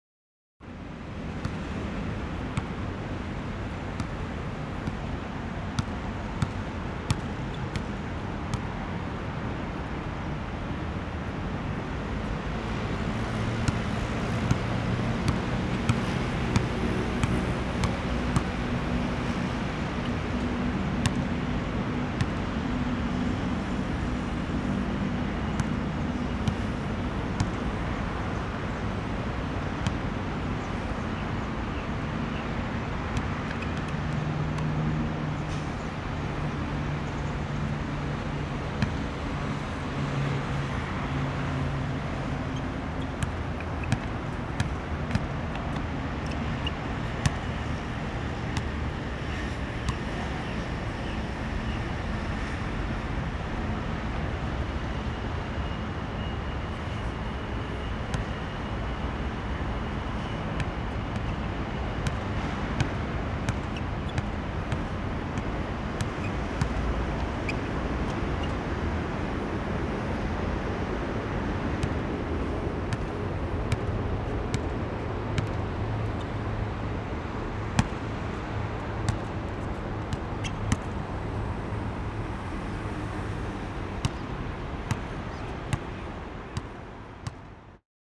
Huanhe S. Rd., Sanchong Dist., New Taipei City - Basketball
Basketball, Traffic Sound
Zoom H4n +Rode NT4
New Taipei City, Taiwan, 13 February 2012